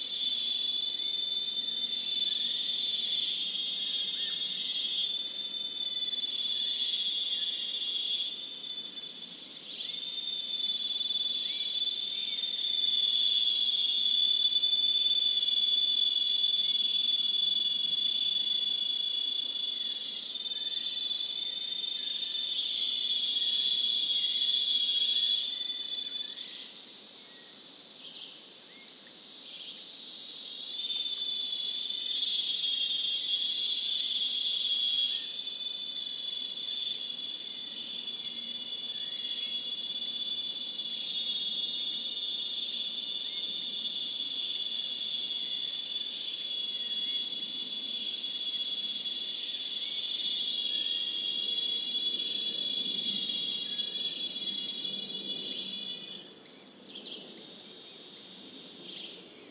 Parque da Cantareira - Núcleo do Engordador - Represa
register of activity